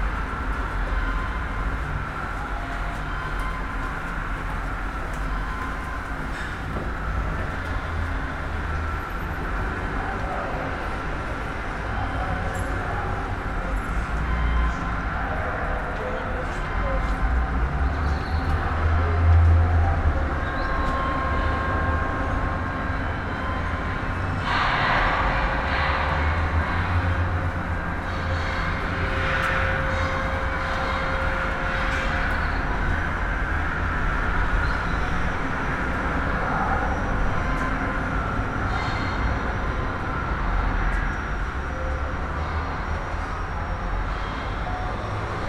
Maribor, Slovenia - passing through stefan rummel's installation
a slow walk through stefan rummel's sound installation, 'within the range of transition', which is placed in a passageway between a quiet courtyard and maribor's main square. recorded quasi-binaurally.
Glavni trg, Maribor, Slovenia